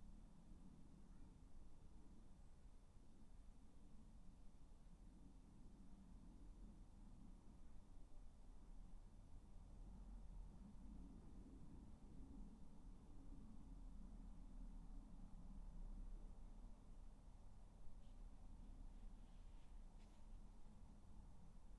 Dorridge, West Midlands, UK - Garden 16
3 minute recording of my back garden recorded on a Yamaha Pocketrak
Dorridge, Solihull, UK